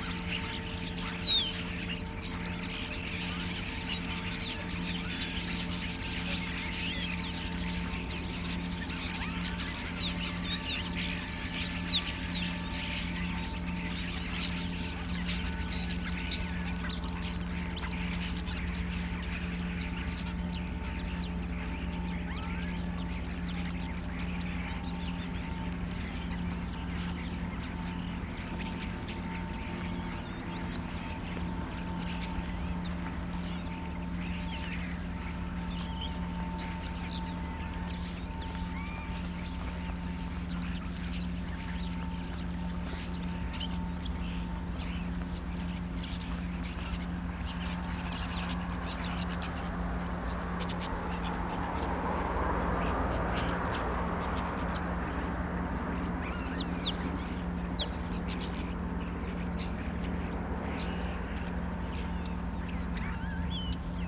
With passing cars, voices and a drony train.
Region Midtjylland, Danmark